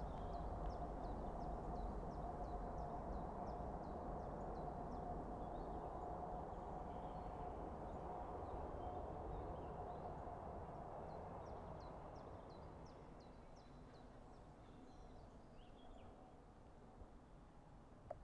landing a380 at dus
zoom h6 msh6 mic